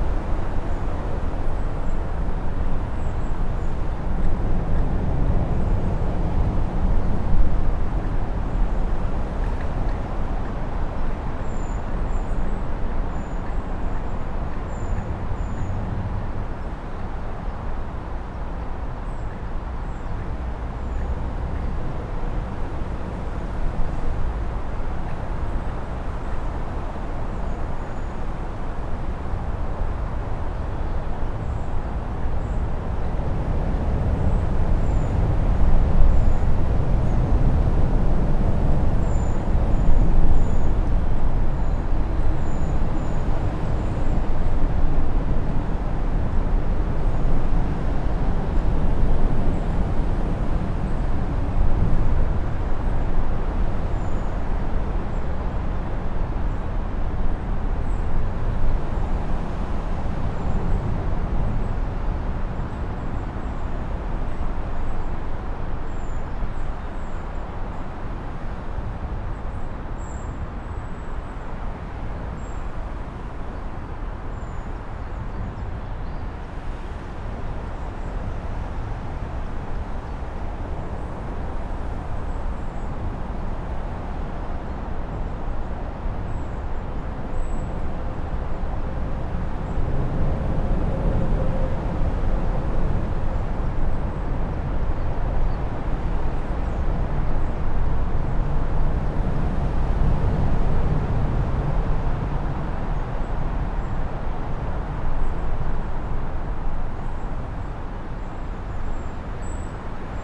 Norwich Southern Bypass, Norwich, UK - Underneath A47 Roadbridge (nearer centre)
Recorded with a Zoom H1n with 2 Clippy EM272 mics arranged in spaced AB.
East of England, England, United Kingdom, June 2021